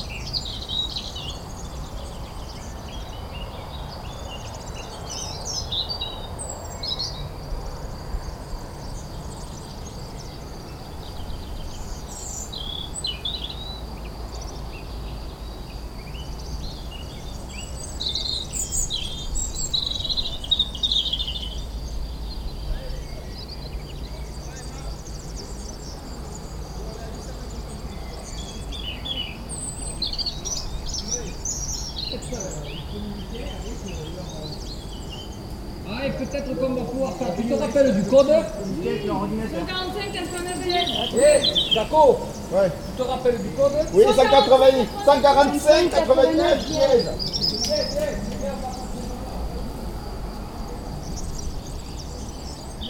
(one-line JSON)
{"title": "La Couarde-sur-Mer, France - Abandoned camping", "date": "2018-05-20 18:00:00", "description": "Into an abandoned camping, which was very-very severely flooded in 2010, the excited European Goldfinch is singing on the top of a tree, near the old closed entrance of the camping.", "latitude": "46.21", "longitude": "-1.47", "altitude": "3", "timezone": "Europe/Paris"}